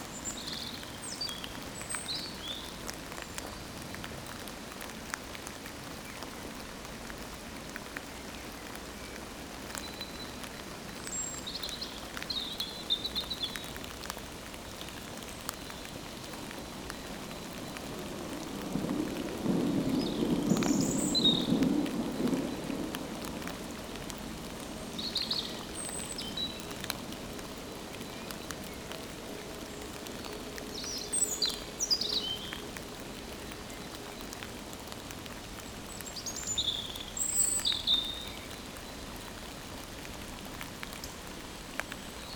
We are here in a kind of special place, as this is an abandoned monks cemetery. On the satelitte view, you can't distinguish it because of the abondant trees. Monks were all working in the school just near (south-west). It was a long time ago. Long... Not so far as numerous people knew them. Today, there's no more anybody to maintain this place. That's sad because there are very-very-very few people knowing this is existing ; simply no more than this, in fact it's sad to say it's an oblivion. Surprisingly, it's also a motivating place as nature is completely free to grow and yell. I was wishing to speak, somewere, about this forgotten monks, without judging their life and their teaching, just because solely everybody merit memory. This place is recorded below a constant quiet rain, mingled with the unceasing trains and frightful planes. A very-very small piece of peace in the midst of life.